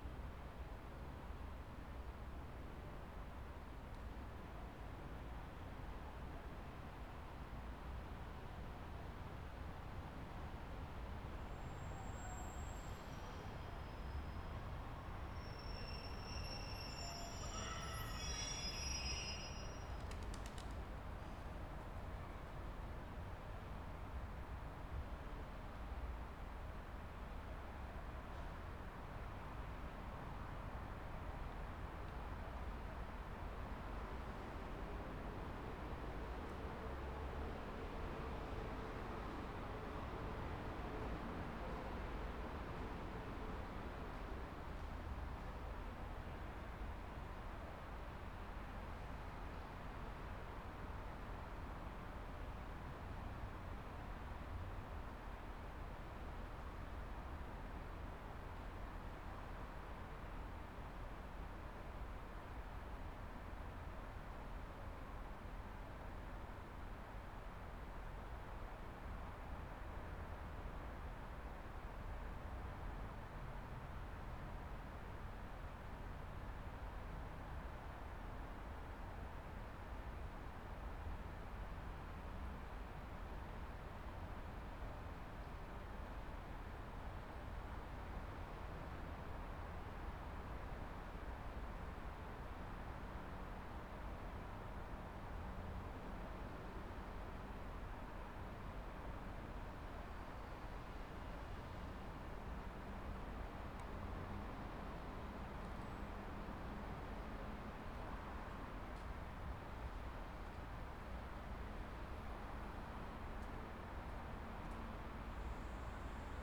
Wakefield Westgate train station, Wakefield, UK - Wakefield Westgate station
Sitting on the platform, waiting for a train.
December 2016